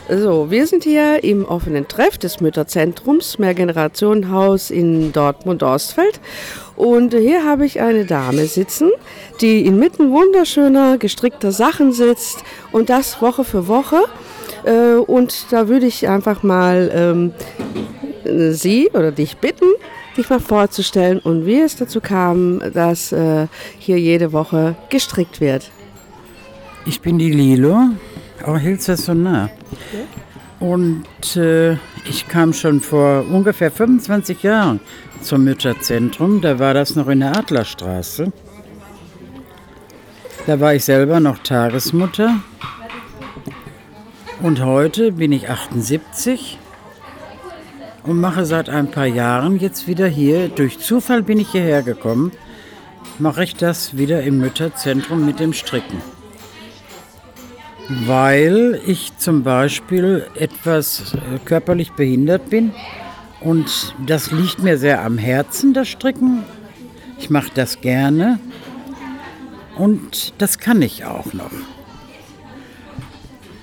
Slavi talks with Lilo (78) … "this is my ideal place to be, almost like home… I enjoy to knit here… I enjoy being among the young mums and children.."
the recording was produced during a three weeks media training for women in a series of events at African Tide during the annual celebration of International Women’s Day.
Dortmund, Germany, 22 February 2018, 13:30